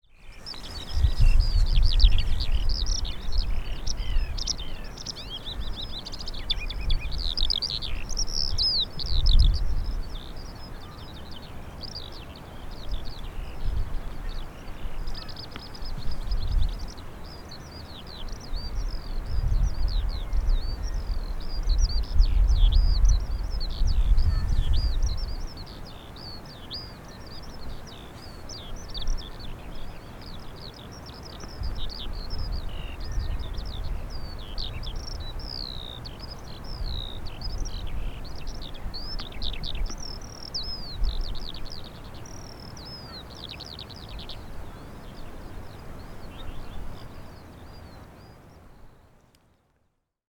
Where radar was developed during WWII.